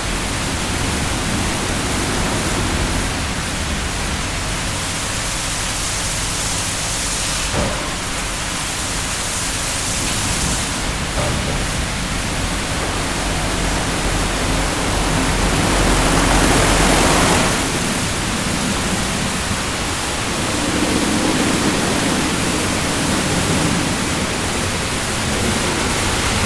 Gėlių g., Ringaudai, Lithuania - Carwash in action
A petrol station carwash in action. Recorded with ZOOM H5.